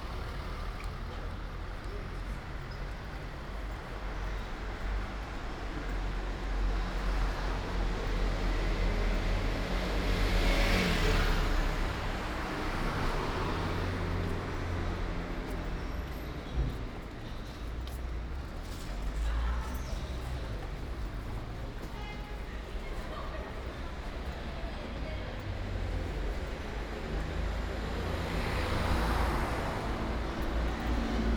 Ascolto il tuo cuore, città. I listen to your heart, city. Several chapters **SCROLL DOWN FOR ALL RECORDINGS ** - Morning (far) walk AR with break in the time of COVID19 Soundwalk
"Morning (far) walk AR with break in the time of COVID19" Soundwalk
Chapter CXVIII of Ascolto il tuo cuore, città. I listen to your heart, city
Thursday, July 16th, 2020. Walk to a (former borderline far) destination. Round trip where the two audio files are joined in a single file separated by a silence of 7 seconds.
first path: beginning at 11:13 a.m. end at 11:41 a.m., duration 27’42”
second path: beginning at 11:57 p.m. end al 00:30 p.m., duration 33’00”
Total duration of recording: 01:00:49
As binaural recording is suggested headphones listening.
Both paths are associated with synchronized GPS track recorded in the (kmz, kml, gpx) files downloadable here:
first path:
second path:
Go to Chapter LX, Wednesday, April 29th, 2020: same path and similar hours.
Torino, Piemonte, Italia, 16 July 2020, ~11:00